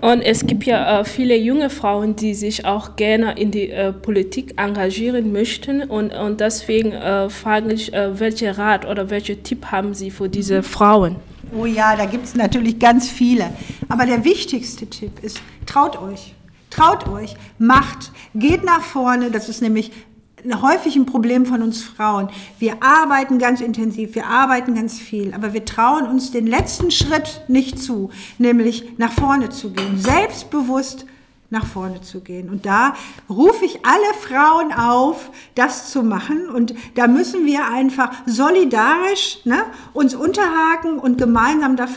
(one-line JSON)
{"title": "Wahlkreisbüro Anja Butschkau, Dortmund - Dortmund ist bunt und...", "date": "2018-02-23 12:15:00", "description": "we are joining the last minutes of an interview conversation which Andrea and Fatomata conducted for MyTide on a visit to the politician Anja Butschkau. Here Frau Butschkau, a member of the regional parliament of NRW, responds to Fatomata’s question about the participation of the Diaspora community, women in particular, in local politics...\nthe recording was produced during a three weeks media training for women in a series of events at African Tide during the annual celebration of International Women’s Day.", "latitude": "51.52", "longitude": "7.47", "altitude": "86", "timezone": "Europe/Berlin"}